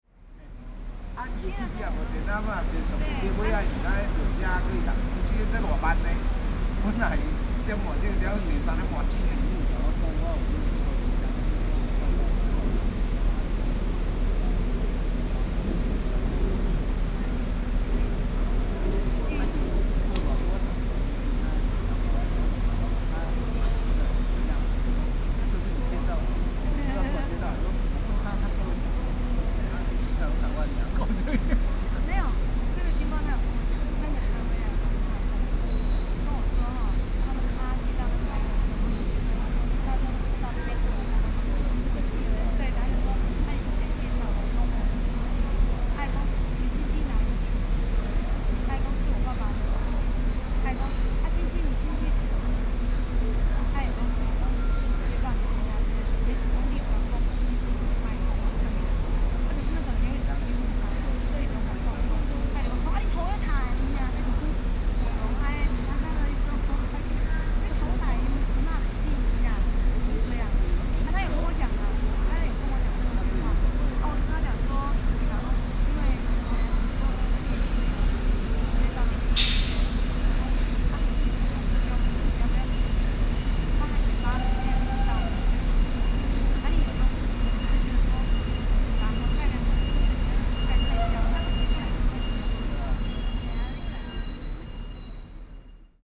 Zuoying, Kaohsiung - Waiting for the train passengers
Outside the high-speed rail station, Sony PCM D50 + Soundman OKM II